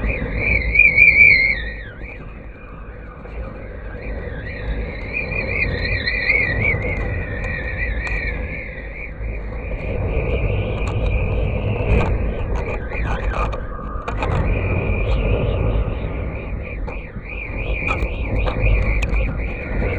A Kite String in Golden Valley, Malvern, Worcestershire, UK - Flying a Kite
A mono recording made with a cheap contact mic atached to the kite string on a blustery day.
West Midlands, England, United Kingdom, 13 March 2021